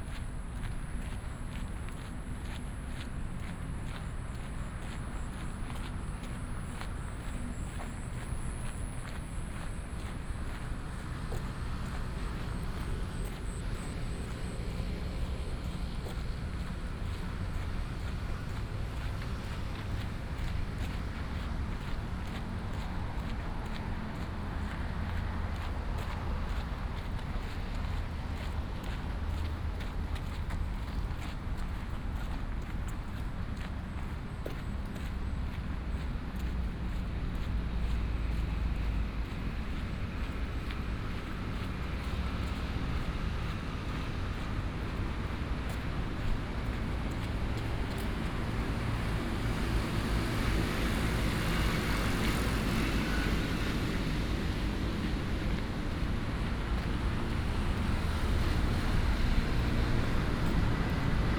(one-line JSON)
{"title": "大安森林公園, Da'an District, Taipei City - walking in the Park", "date": "2015-07-25 19:40:00", "description": "walking in the Park, Footsteps, Traffic Sound", "latitude": "25.03", "longitude": "121.54", "altitude": "12", "timezone": "Asia/Taipei"}